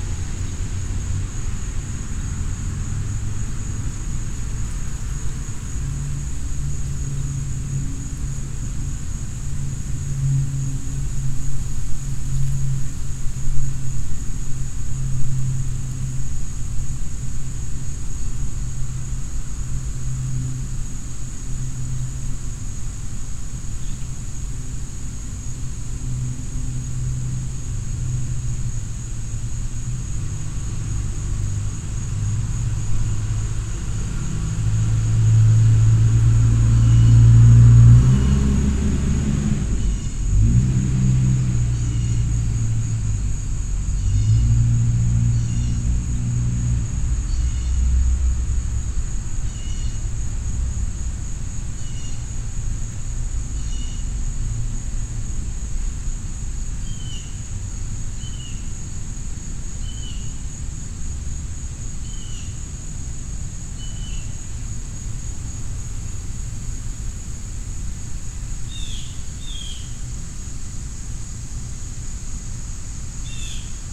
Paulding Ave, Northvale, NJ, USA - Neighborhood Ambience
This is a recording of the general ambience surrounding the neighborhood, as captured from a house on Paulding Avenue. Insects are heard throughout the recording, along with the occasional car, planes passing overhead, and the droning of a leaf blower in the background.
[Tascam Dr-100mkiii w/ Primo EM-272 omni mics]